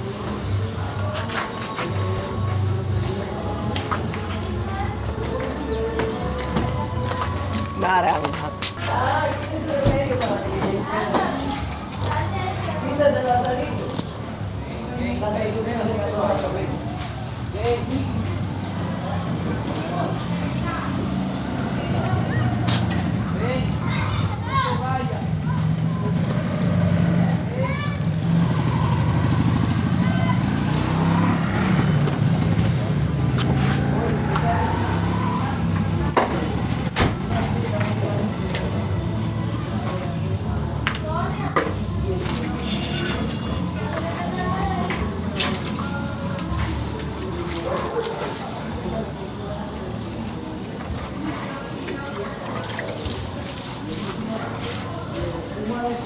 Santiago de Cuba, casa cerca de Villa Tropico